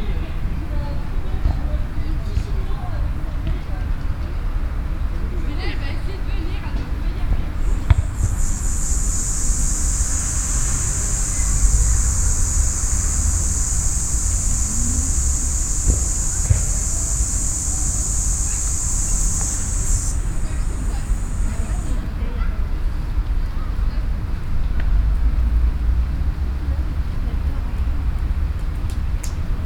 Lyon, Rue Chaziere, at the Villa Gillet
Children playing, insects.
Lyon, France